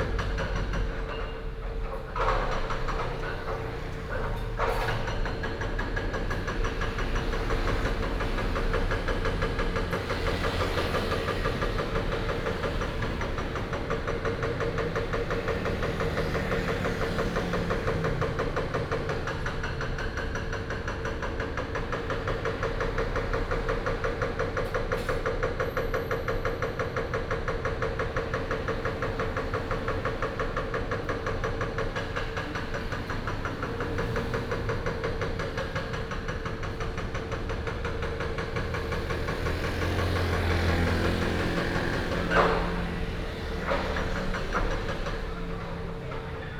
Dazhuang Rd., Xiangshan Dist., Hsinchu City - Removal of factory buildings
Removal of factory buildings, traffic sound, Binaural recordings, Sony PCM D100+ Soundman OKM II